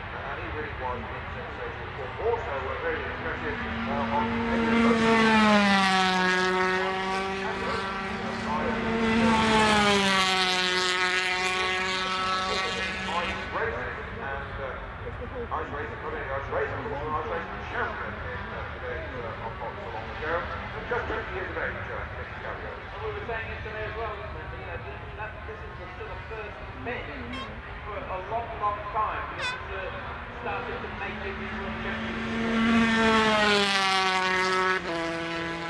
Castle Donington, UK - British Motorcycle Grand Prix 2003 ... 125 ...
125cc motorcycle warm up ... Starkeys ... Donington Park ... warm up and associated noise ...
Derby, UK